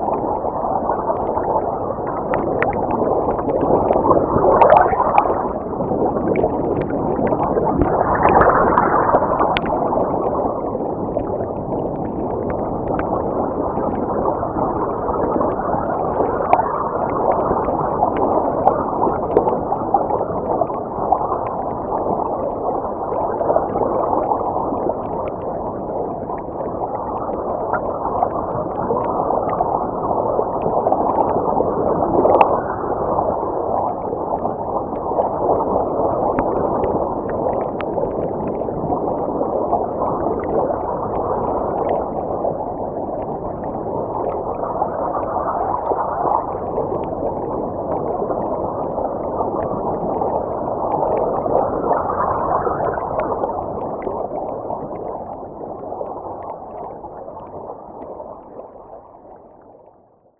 {"title": "Wały Jagiellońskie, Gdańsk, Polska - ikm piknik 4", "date": "2018-08-11 15:15:00", "description": "Nagranie dokonano podczas pikniku realizowanego przez Instytut Kultury Miejskiej", "latitude": "54.35", "longitude": "18.65", "altitude": "9", "timezone": "GMT+1"}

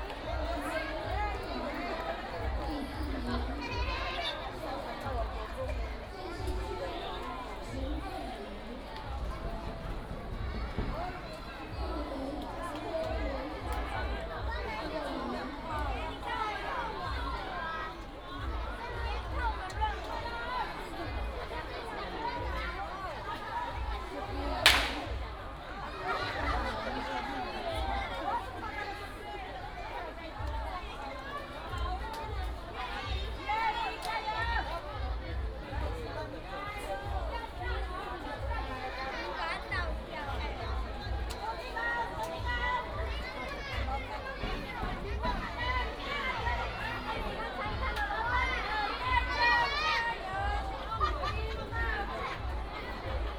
{"title": "金峰鄉介達國小, Taitung County - Cheer cheers", "date": "2018-04-04 11:25:00", "description": "School and community residents sports competition, Cheer cheers", "latitude": "22.60", "longitude": "121.00", "altitude": "49", "timezone": "Asia/Taipei"}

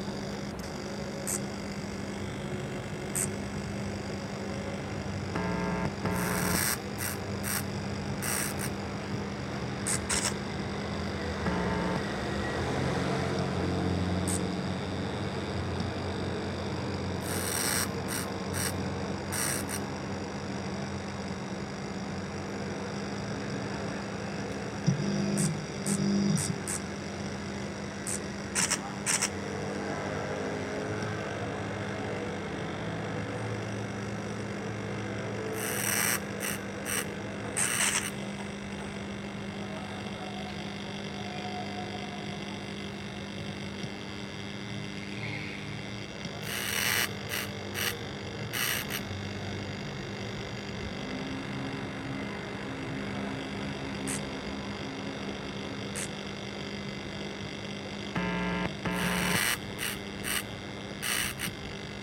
{"title": "Grachtengordel-West, Amsterdam, Niederlande - Amsterdam - Amsterdam Light Festival, 'This is it, be here now' by Rudi Stern", "date": "2014-11-30 18:30:00", "description": "Amsterdam - Amsterdam Light Festival, 'This is it, be here now' by Rudi Stern.\n[Hi-MD-recorder Sony MZ-NH900, Beyerdynamic MCE 82]", "latitude": "52.37", "longitude": "4.89", "altitude": "7", "timezone": "Europe/Amsterdam"}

